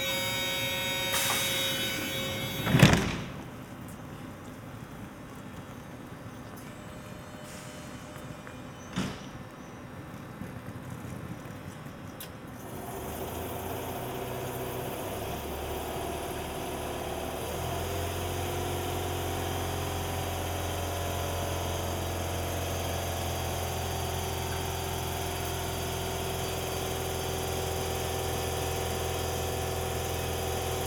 Gare d'Etterbeek, Ixelles, Belgique - Etterbeek station ambience
Trains passing by, a few birds, voice annoucement.
Tech Note : Ambeo Smart Headset binaural → iPhone, listen with headphones.
Brussel-Hoofdstad - Bruxelles-Capitale, Région de Bruxelles-Capitale - Brussels Hoofdstedelijk Gewest, België / Belgique / Belgien, 4 May 2022, 10:20